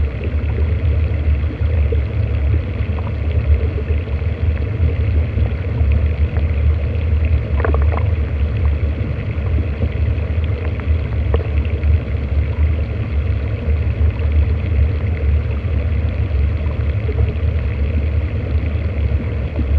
SBG, Gorg Negre - Torrent del Infern (hidro1)
Exploración con hidrófonos del torrente y la cascada.
20 July 2011, 15:00